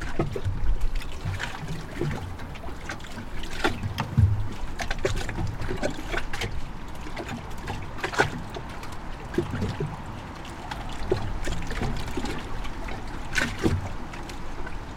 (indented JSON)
{
  "title": "Kungsholmen, Stockholm, Suecia - wooden platform",
  "date": "2016-08-11 19:01:00",
  "description": "Lloc tranquil enfront del mar.\nQuiet in front of the sea.\nLugar tranquilo, delante del mar.",
  "latitude": "59.33",
  "longitude": "18.04",
  "altitude": "6",
  "timezone": "Europe/Stockholm"
}